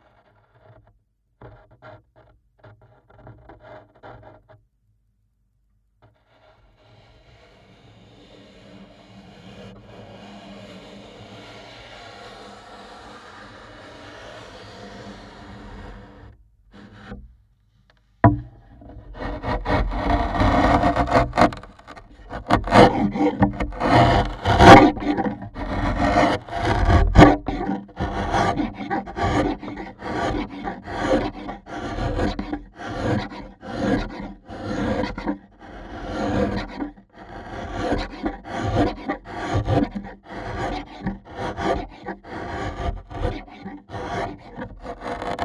Umeå. Violin makers workshop.
Touching the plate. Planing. (Piezo mic)